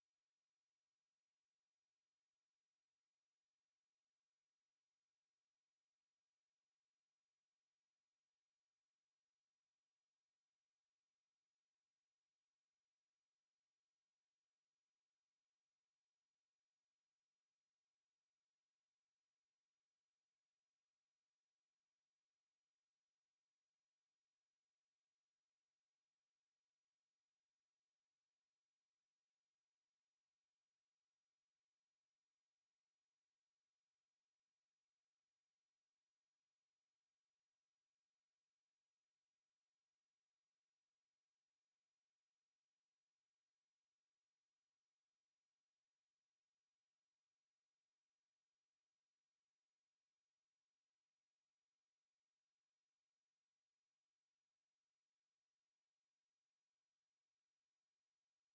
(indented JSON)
{
  "title": "Šv. Stepono g., Vilnius, Lithuania - Street electricity pole",
  "date": "2019-07-19 17:00:00",
  "description": "Dual contact microphone recording of an ordinary street pole. Traffic hum and occasional passing trolleybus resonate strongly through the metal body. Recorded using ZOOM H5.",
  "latitude": "54.67",
  "longitude": "25.28",
  "altitude": "135",
  "timezone": "Europe/Vilnius"
}